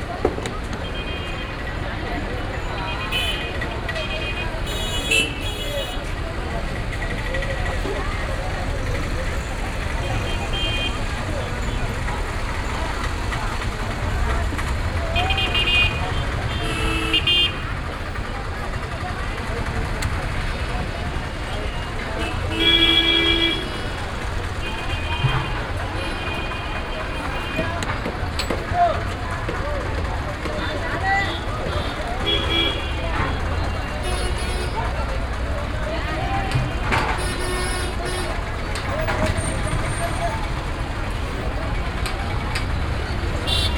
Bijapur, Central Market, Above the market
India, Karnataka, Bijapur, Market, Horn, crowd, road traffic, binaural